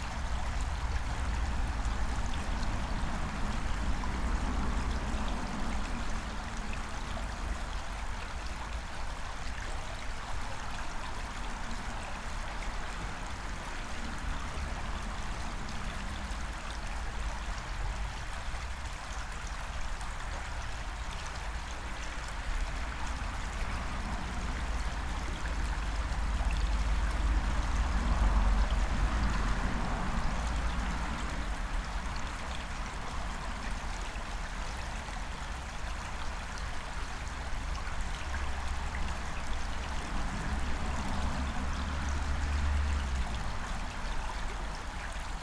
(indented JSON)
{
  "title": "Utena, Lithuania, under the pedestrians bridge",
  "date": "2018-07-17 18:15:00",
  "description": "listening under oedestrians bridge. the road is near",
  "latitude": "55.50",
  "longitude": "25.58",
  "altitude": "106",
  "timezone": "Europe/Vilnius"
}